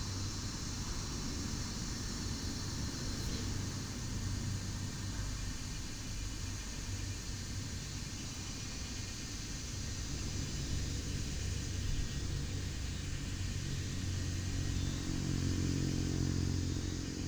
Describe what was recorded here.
In the parking lot, Cicada sounds, Traffic Sound